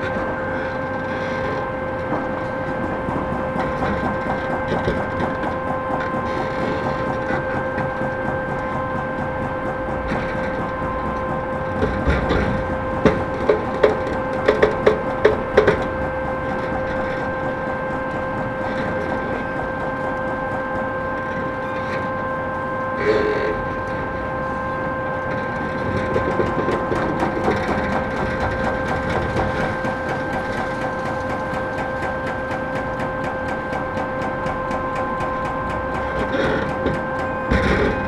berlin: sonnenallee - A100 - bauabschnitt 16 / federal motorway 100 - construction section 16: demolition of a logistics company
crane with grapple demolishes the building, excavator with mounted jackhammer demolishes building elements, fog cannon produces a curtain of micro droplets that binds dust, noise of different excavators
the motorway will pass at a distance of about 20 meters
the federal motorway 100 connects now the districts berlin mitte, charlottenburg-wilmersdorf, tempelhof-schöneberg and neukölln. the new section 16 shall link interchange neukölln with treptow and later with friedrichshain (section 17). the widening began in 2013 (originally planned for 2011) and will be finished in 2017.
sonic exploration of areas affected by the planned federal motorway a100, berlin.
february 2014
Berlin, Germany